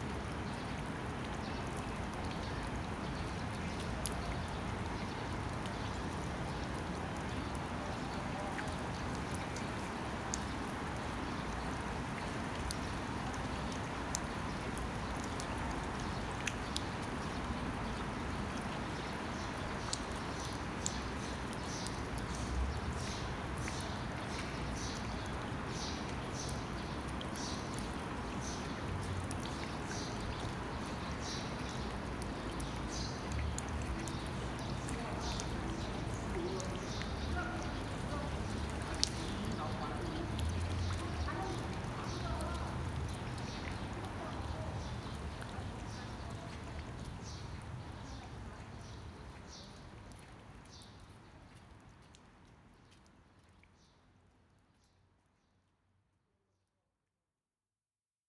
대한민국 서울특별시 서초구 양재동 양재천로 144 - Yangjaecheon, Summer, Rain Gutter, Cicada
Yangjaecheon Stream, Summer, Rain Gutter, Cicada
양재천, 여름, 빗물받이, 매미소리
27 July 2019, 15:36